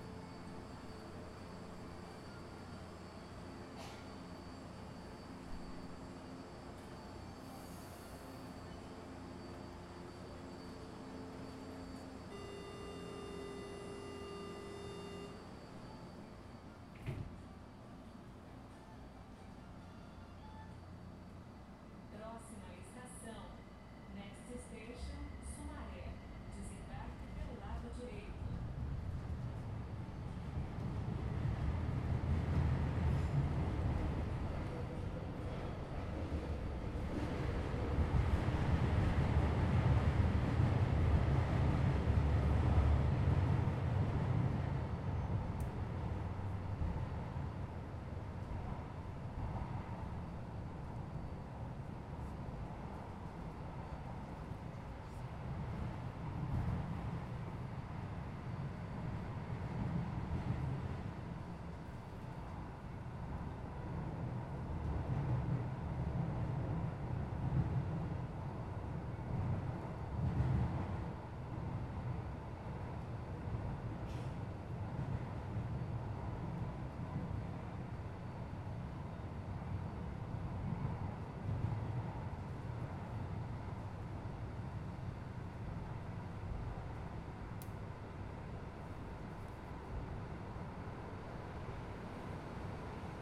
Vila Madalena - R. Dr. Paulo Vieira, 010 - Sumarezinho, São Paulo - SP, 01257-010, Brasil - Metrô Vila Madalena
#SaoPaulo #SP #Metro #VilaMadalena #Vila #Madalena #Underground #Subway